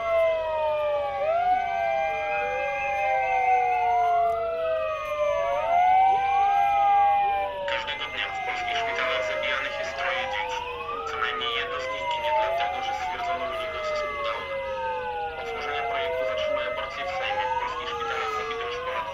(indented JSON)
{
  "title": "Piotra Maszyńskiego, Warszawa, Poland - Demonstration for womens rights",
  "date": "2018-03-23 13:21:00",
  "description": "Anti-abortion protestors in a sound battle with the Sirens.",
  "latitude": "52.23",
  "longitude": "21.03",
  "altitude": "111",
  "timezone": "Europe/Warsaw"
}